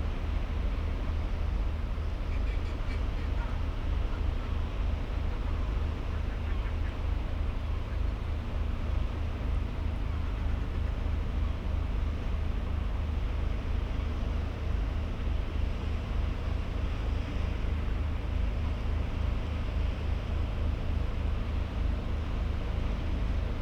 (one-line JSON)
{"title": "Henrietta St, Whitby, UK - under the east cliff ... incoming tide ...", "date": "2019-05-17 10:30:00", "description": "under the east cliff ... incoming tide ... lavalier mics clipped to bag ... bird calls from ... fulmar ... herring gull ... lesser-blacked back gull ... rock pipit ... sandwich tern ... coast guard helicopter whirrs by ... a school party wander across the beach ...", "latitude": "54.49", "longitude": "-0.61", "altitude": "21", "timezone": "Europe/London"}